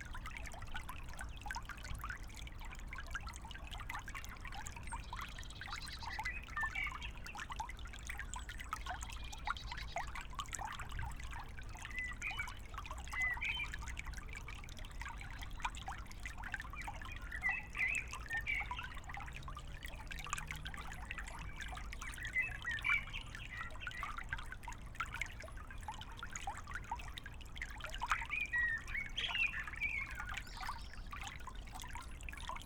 Hoheneiche, Ahrensfelde, Deutschland - river Wuhle, water flow, ambience
river Wuhle water flow near small bridge, ambience.
(SD702, AT BP4025)
Ahrensfelde, Germany, 28 March 2015